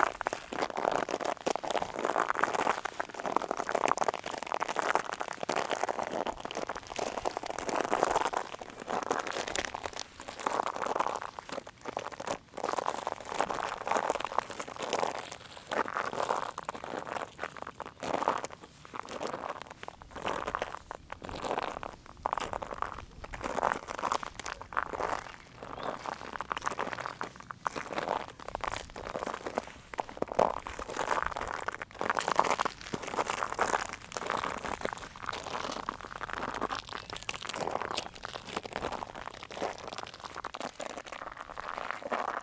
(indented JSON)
{
  "title": "Mont-Saint-Guibert, Belgique - Dried mud - Asmr sound",
  "date": "2016-07-08 20:45:00",
  "description": "Walking in dried mud. After the floods, a large layer of mud was parched here. It makes some mud platelets. Walking in there makes some special sounds. Some consider it's an asmr sound.",
  "latitude": "50.63",
  "longitude": "4.62",
  "altitude": "98",
  "timezone": "Europe/Brussels"
}